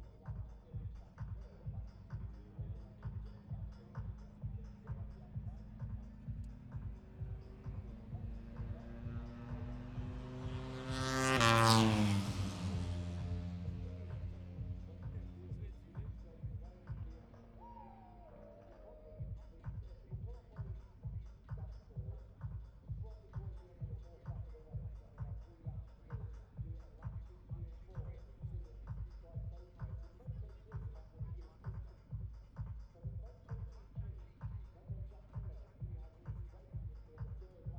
british motorcycle grand prix 2022 ... moto three free practice three ... bridge on wellington straight ... dpa 4060s clipped to bag to zoom h5 ... plus disco ...